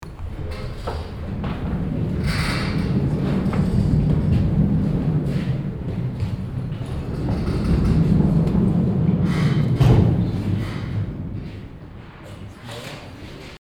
Buntentor, Bremen, Deutschland - Theatre hall, moving bridge
Inside the main theatre hall. The sound of the moving metal work bridge.
soundmap d - social ambiences and topographic field recordings
Bremen, Germany, 12 June 2012